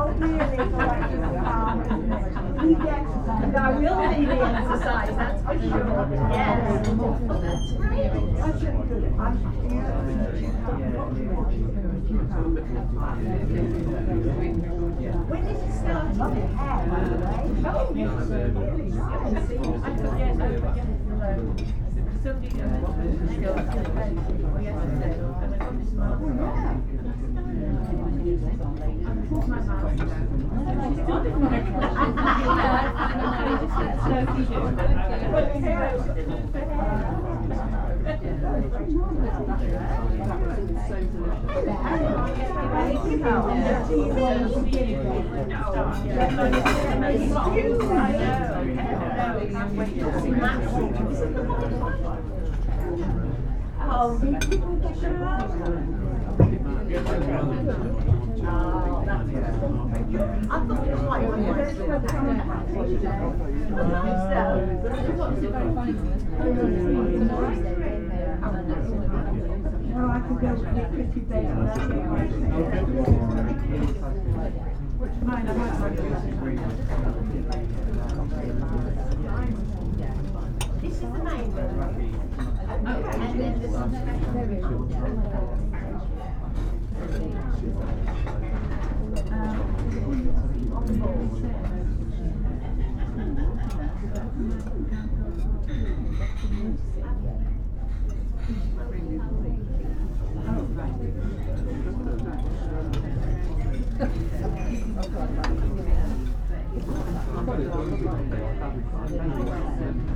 Lunch time in a busy cafe. It is warm and we are eating outside in the courtyard.
MixPre 6 II with 2 x Sennheiser MKH 8020s. My home made windjammer is mistaken for a dog under the table, the third time this has happened.
West Midlands, England, United Kingdom, October 2021